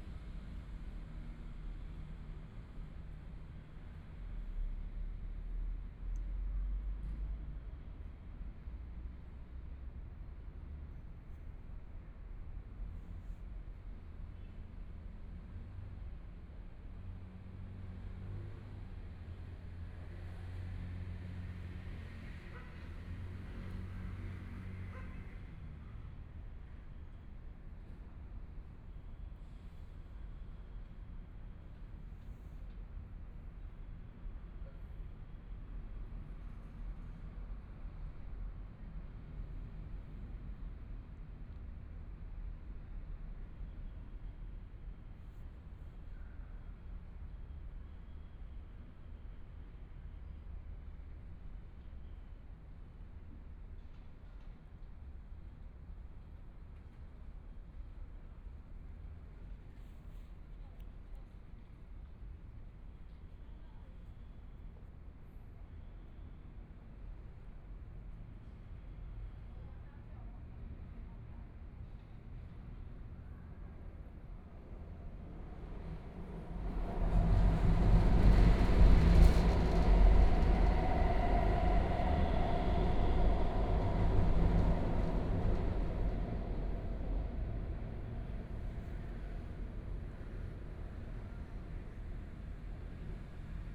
Taipei, Taiwan - In the track below
In the track below, By the sound of trains, Traffic Sound, Binaural recordings, Zoom H4n+ Soundman OKM II
20 January, 17:44